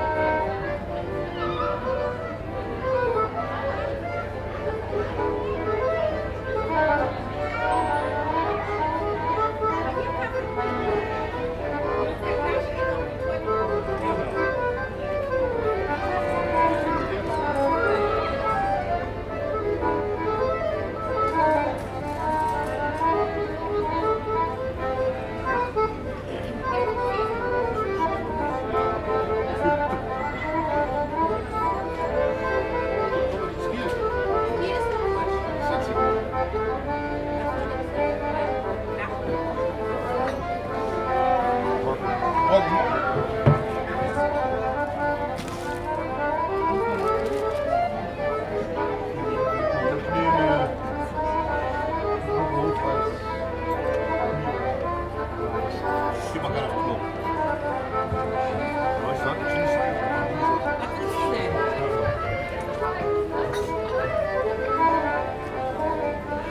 Draußen im Museumscafé gesessen. Ein Akkordeon spielt, Stimmen, Geschirr.
Sat outside in the museum café. An accordion plays, voices, dishes.
Cologne, Germany